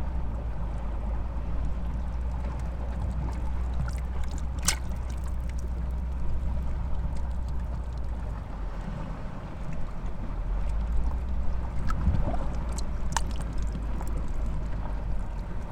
Elafonisi beach, Crete, amongst stones
microphones amongst the stones near beach
Kandanos Selinos, Greece, 27 April 2019